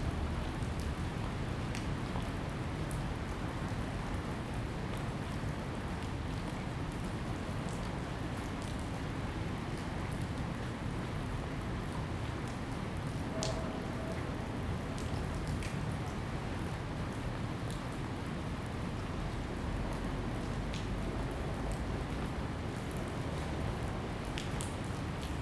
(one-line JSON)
{"title": "Taipei Railway Workshop, Taiwan - Thunderstorm", "date": "2014-09-24 17:23:00", "description": "Thunderstorm, Disused railway factory\nZoom H2n MS+XY", "latitude": "25.05", "longitude": "121.56", "altitude": "9", "timezone": "Asia/Taipei"}